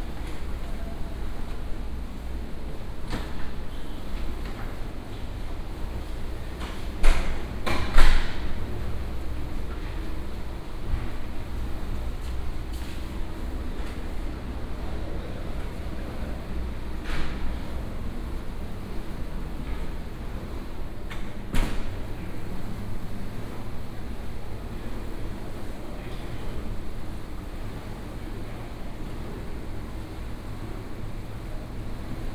Airport Berlin Schönefeld SFX, Germany - walk to gate 65
Sunday morning at airport Berlin Schönefeld, slow walk in terminal B
(Sony PCM D50, OKM2 binaural)